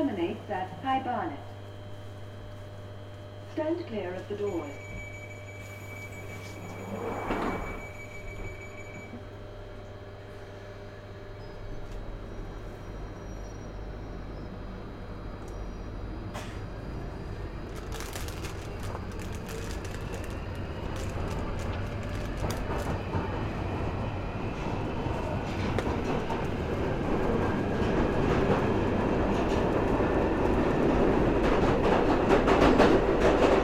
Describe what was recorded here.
Travelling on London Underground train from Waterloo to Tottenham Court Road Stations.